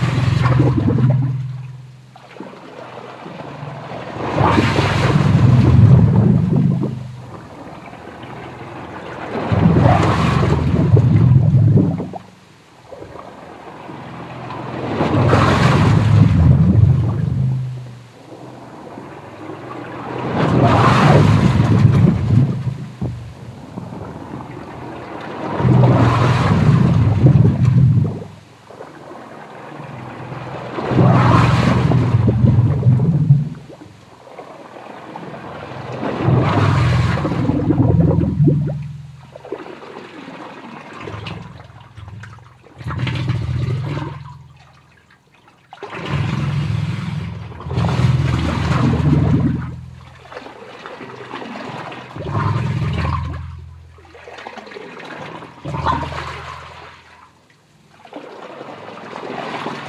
ancient sewer pipes - valetta, malta - ancient sewer pipes
i recorded from inside a hole along the side of huge pipe, reportedly ancient sewer pipes...
this was during my usual walk from where i was living a few streets away in valetta...
nov. 2002
Valletta, Malta